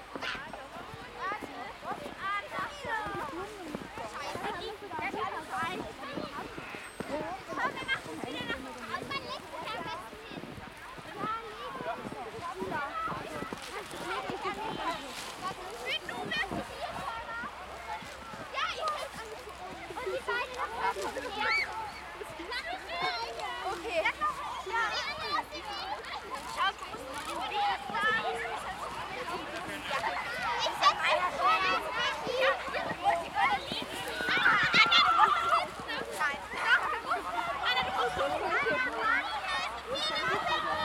Krauthügel, Hans-Sedlmayr-Weg, Salzburg, Österreich - first snow
first snow: children sledding and sliding down sacks